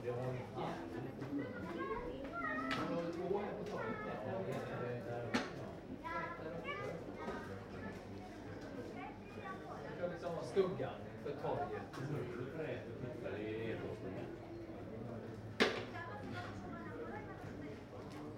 {"title": "Fourni, Griechenland - Seitenstrasse", "date": "2003-05-09 17:11:00", "description": "Am Abend in einer Seitenstrasse. Die Insel ist Autofrei.\nMai 2003", "latitude": "37.58", "longitude": "26.48", "altitude": "13", "timezone": "Europe/Athens"}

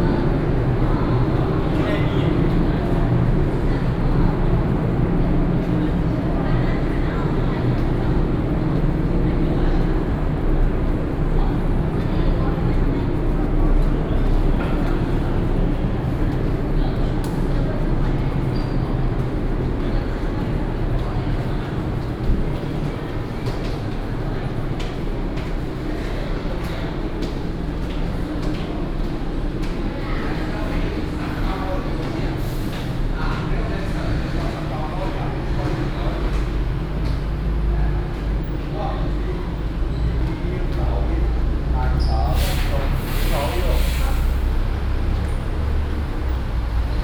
{"title": "Sec., Fuxing Rd., Taichung City - Walking in the underpass", "date": "2016-09-06 16:22:00", "description": "Walking in the underpass, Air conditioning noise, Street performers, Footsteps, traffic sound", "latitude": "24.14", "longitude": "120.69", "altitude": "79", "timezone": "Asia/Taipei"}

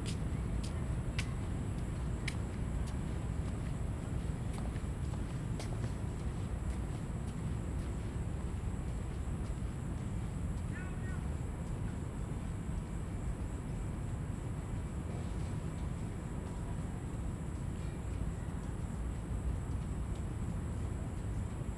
{"title": "大安森林公園, 大安區 Taipei City - in the Park", "date": "2015-06-28 19:32:00", "description": "in the Park, Traffic noise\nZoom H2n MS+XY", "latitude": "25.03", "longitude": "121.54", "altitude": "7", "timezone": "Asia/Taipei"}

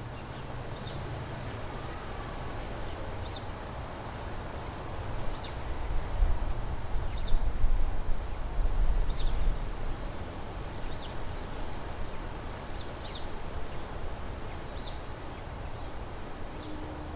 {"title": "Emerald Dove Dr, Santa Clarita, CA, USA - Birds & Wind", "date": "2020-04-20 16:10:00", "description": "From the backyard. A stereo mic and two mono mics mixed together.", "latitude": "34.41", "longitude": "-118.57", "altitude": "387", "timezone": "America/Los_Angeles"}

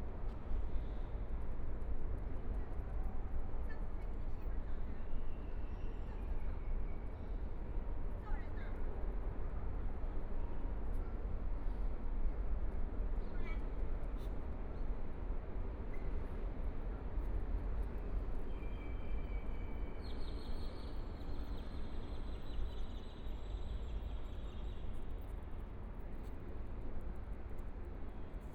{"title": "大直橋, Taipei city - Sitting under the bridge", "date": "2014-02-16 17:17:00", "description": "Holiday, Sitting under the bridge, Sunny mild weather, Birds singing, Traffic Sound, Binaural recordings, Zoom H4n+ Soundman OKM II", "latitude": "25.08", "longitude": "121.54", "timezone": "Asia/Taipei"}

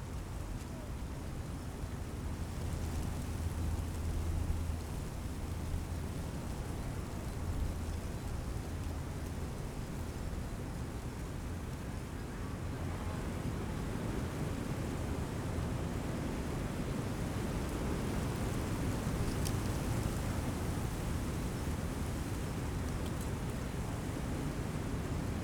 {"title": "Tempelhofer Feld, Berlin, Deutschland - poplars, gusts of wind", "date": "2014-02-09 12:00:00", "description": "Sunday noon, snow has gone, wind from south-west moves branches and dry leaves of my poplar trees.\n(SD702, DPA4060)", "latitude": "52.48", "longitude": "13.40", "altitude": "42", "timezone": "Europe/Berlin"}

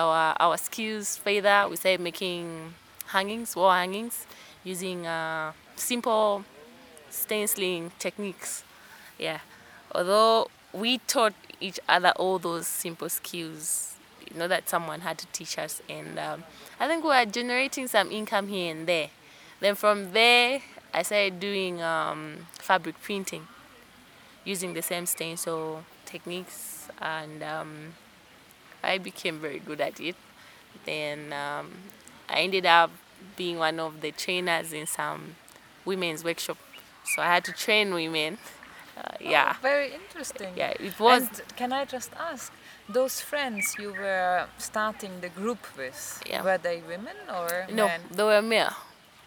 {"title": "The Garden Club, Lusaka, Zambia - I’ve managed to survive…", "date": "2012-07-20 16:39:00", "description": "We’ve reached the garden café with Mulenga Mulenga, settling down under a tree near the fountain pont. Mulenga gives us a vivid picture of the struggles, challenges and the triumphs of a young woman in Zambia determined to survive as a visual artist….\nplaylist of footage interview with Mulenga", "latitude": "-15.40", "longitude": "28.31", "altitude": "1262", "timezone": "Africa/Lusaka"}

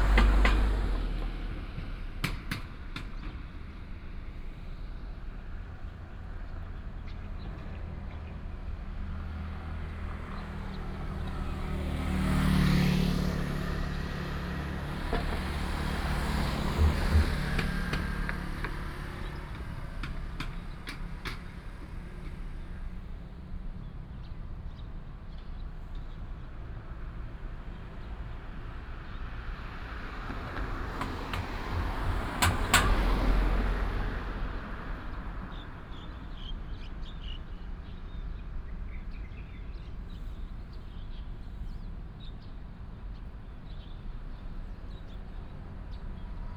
{"title": "忠貞新村, Hsinchu City - Birds and traffic sound", "date": "2017-09-27 16:13:00", "description": "next to the old community after the demolition of the open space Bird call, traffic sound, Binaural recordings, Sony PCM D100+ Soundman OKM II", "latitude": "24.80", "longitude": "121.00", "altitude": "52", "timezone": "Asia/Taipei"}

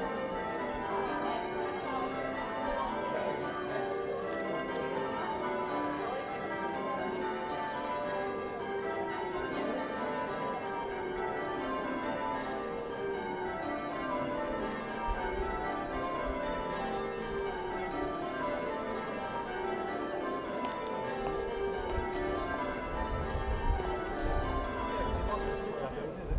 {"title": "Cambridge, Cambridgeshire, UK - Cambridge church bells", "date": "2013-05-05 13:10:00", "description": "Church bells on a busy Sunday morning. You can mostly hear the bustle of tourists as they walk past and the changing melodies and rhythms of the church bells. Standing just in front of me was a busker waiting for the church bells to stop before he could start playing.", "latitude": "52.21", "longitude": "0.12", "altitude": "17", "timezone": "Europe/London"}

